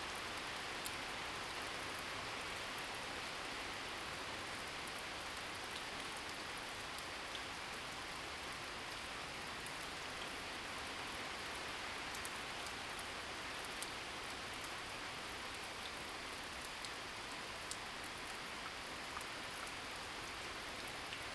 種瓜路45-1, 埔里鎮桃米里 - Rain and bird sound
early morning, Rain sound
Zoom H2n MS+XY